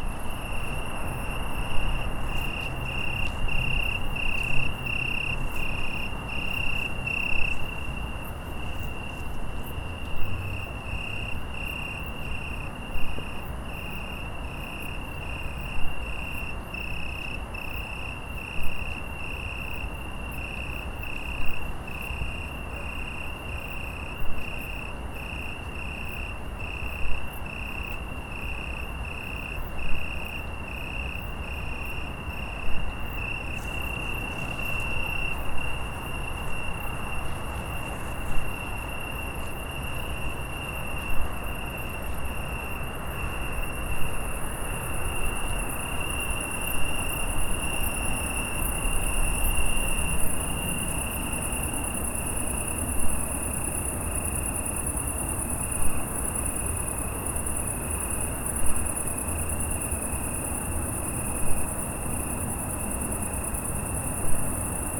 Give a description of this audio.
At Albertov, just behind the Church of St. Apollinaire is long staircase downwards the Studničkova street, where you can find areal of the hospital and other Charles University buildings. The Botanic garden is very close from there. This place is very quiet and beautiful during summer evenings and nights. Along the staircase are small shrubs and benches. You can meet there just loud crickets and very silent lovers during the August. Memories of the summer night and the chorus of crickets.